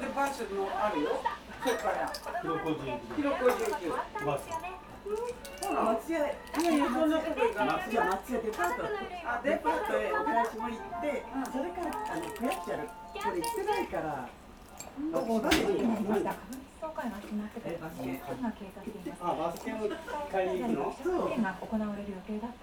Japonia, Tōkyō-to, Taitō-ku, Asakusa, サニー - sunny cafe
inside a small cafe in Asakusa. most of the interior are clocks ticking constantly. owner talking to local customers. customers reading newspapers and talking. tv show and commercials above. (roland r-07)